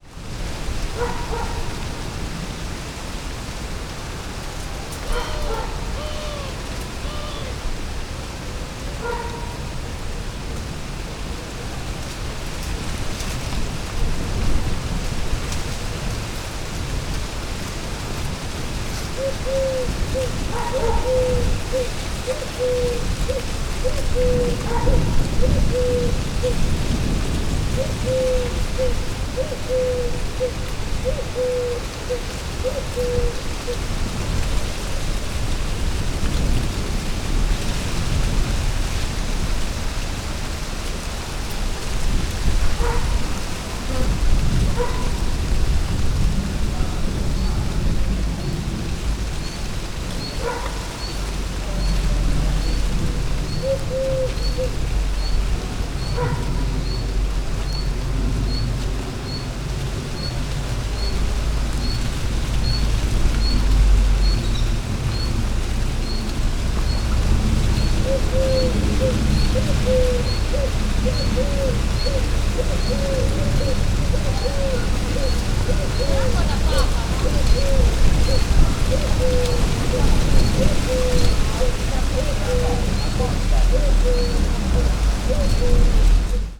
Via Aldo Moro, Sassoleone BO, Italy - Trees in the wind, toward the valley
Trees in the wind, toward the valley, recorded with a Sony PCM-M10